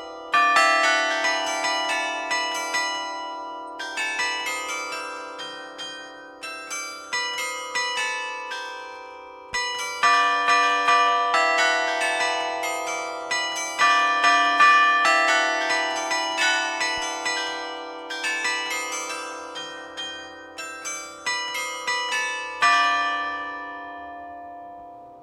Pl. Max Lejeune, Abbeville, France - Carillon de la mairie d'Abbeville
Abbeville (Somme)
Carillon de l'Hôtel de ville
Ritournelles automatisées